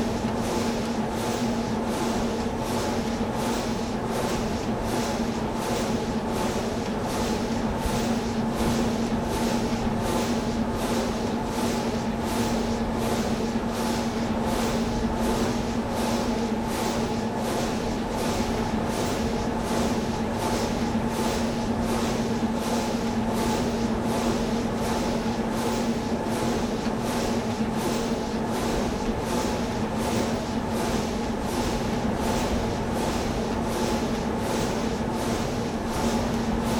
Leros, Griechenland - Wind Energie
Nahaufnahme
Mai 2003
Leros, Greece, 2 May 2003, 16:55